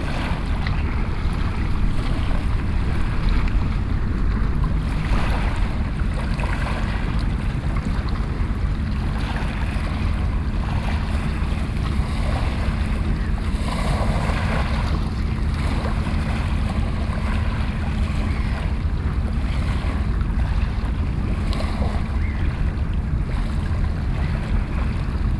Norway, Oslo, Bygdoy, Sea, Waves, binaural
Norway, 4 June 2011